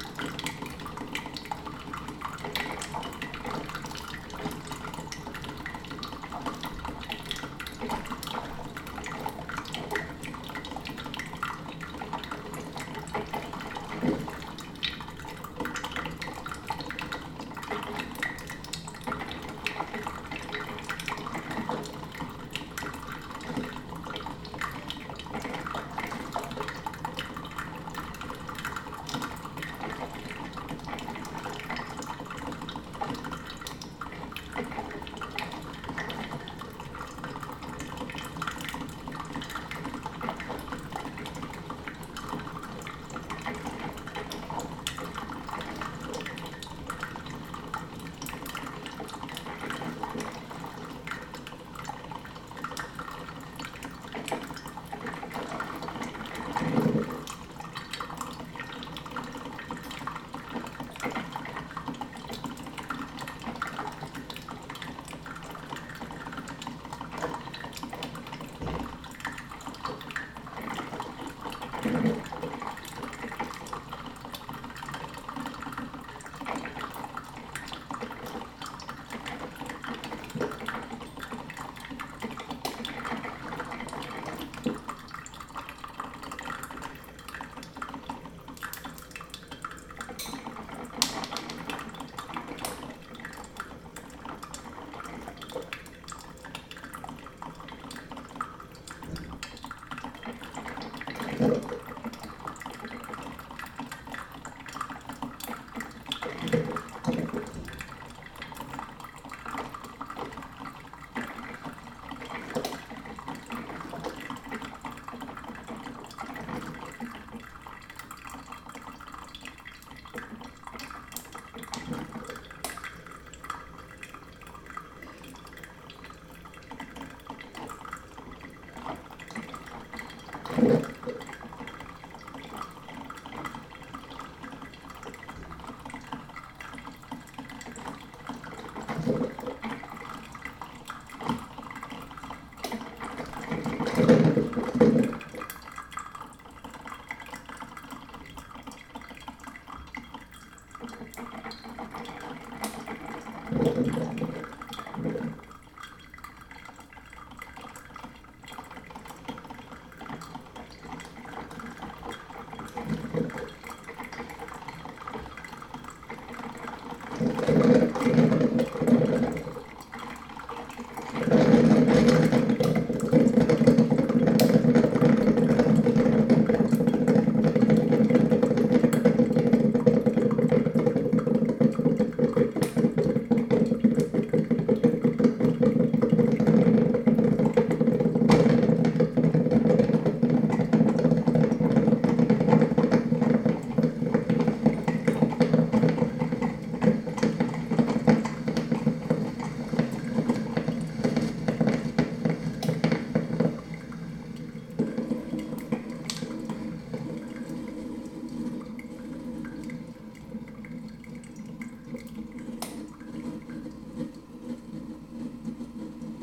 Lower Chautauqua, Boulder, CO, USA - Morning Coffee
Waking up in the morning I alway cook up a pot of coffee. This was as quite cold morning.